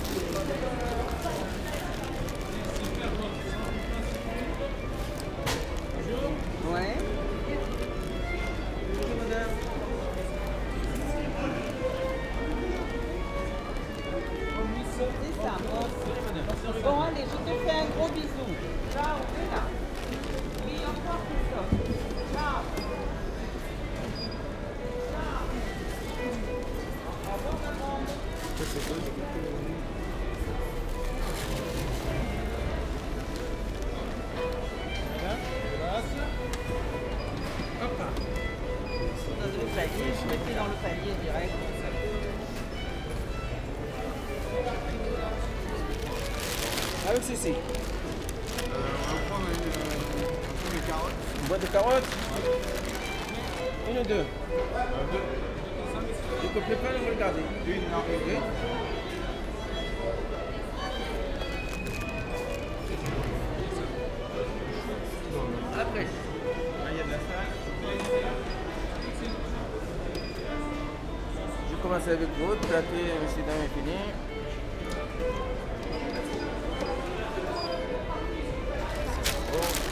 Place des Capucins, Bordeaux, France - Market ambience
Fresh fruits and vegetables stands, cheese, nuts, etc.
Recorded wit two homemade tiny microphones (Primo EM258 omni electret capsule), clipped on the hood of my coat, plugged into a Zoom H5 in my inner pocket.